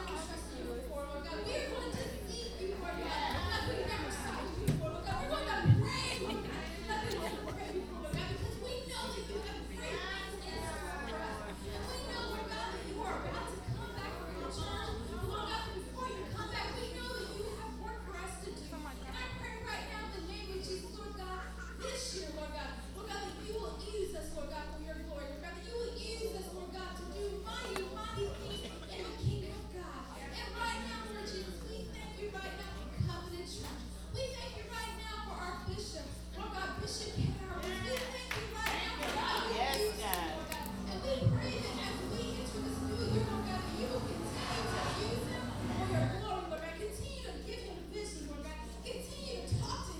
{
  "title": "Covenant Worship Center 2622 San Pablo Ave, Berkeley, CA, USA - Prayer",
  "date": "2016-12-31 21:00:00",
  "description": "This was recorded during the New Year's service for 2017~! Before the service began there was a lengthy prayer session. This was so long before the actual beginning of the event that not many people were there. I was seated in the front of the Church recording with binaural microphones.",
  "latitude": "37.86",
  "longitude": "-122.29",
  "altitude": "16",
  "timezone": "America/Los_Angeles"
}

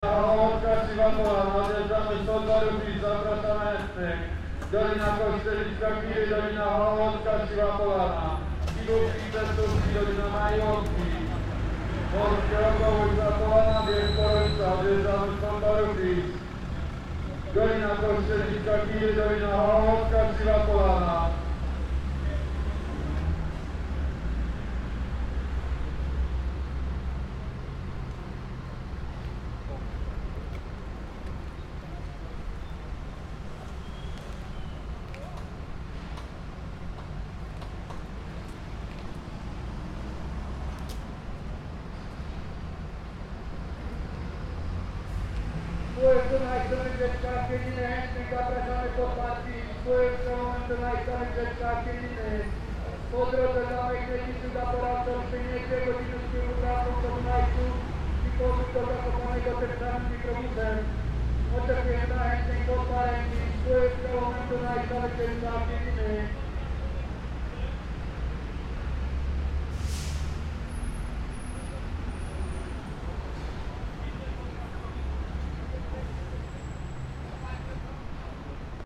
{
  "title": "zakopane bus trip, sound advertisement",
  "date": "2011-07-18 15:33:00",
  "description": "zakopane bus trip advertisement",
  "latitude": "49.30",
  "longitude": "19.96",
  "altitude": "831",
  "timezone": "Europe/Warsaw"
}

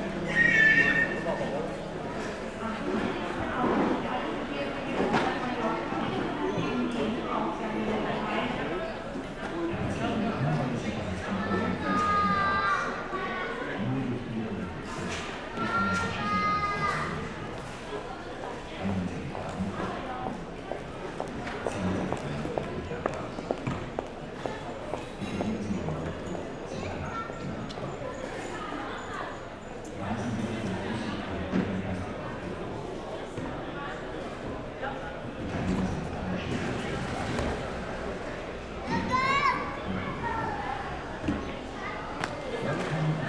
{
  "title": "graz airport - at the security check",
  "date": "2012-06-03 17:50:00",
  "description": "before entering the security check at Graz airport",
  "latitude": "46.99",
  "longitude": "15.44",
  "altitude": "335",
  "timezone": "Europe/Vienna"
}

